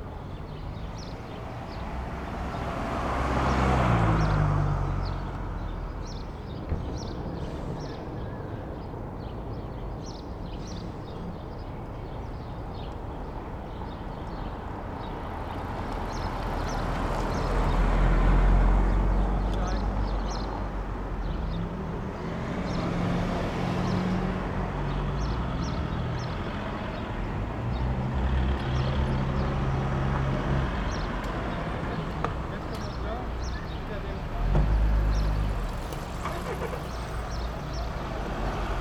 Berlin: Vermessungspunkt Maybachufer / Bürknerstraße - Klangvermessung Kreuzkölln ::: 24.05.2011 ::: 10:33
Berlin, Germany